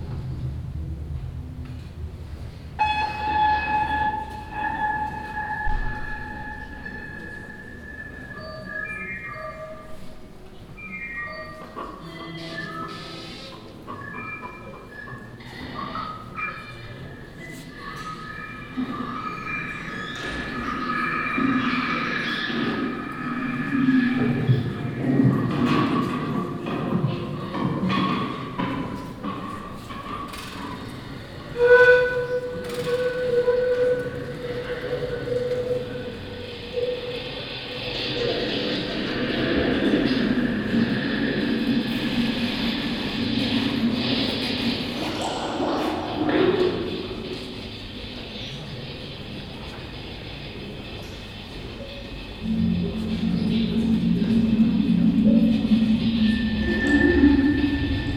Düsseldorf, Heinrich Heine Allee, Wilhelm Marx Haus - düsseldorf, heinrich heine allee, wilhelm marx haus
recorded during the interface festival at the staircase of the building - sound art students performing a staircase music for the place
soundmap d - social ambiences, art spaces and topographic field recordings
Düsseldorf, Germany, 24 January 2011, ~14:00